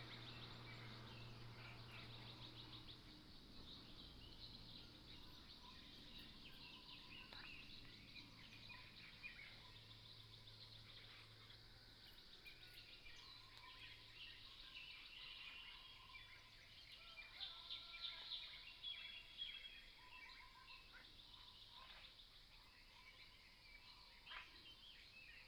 TaoMi 綠屋民宿, Nantou County - Bird calls
Bird calls, Frogs sound, at the Hostel
Nantou County, Taiwan, 29 April 2015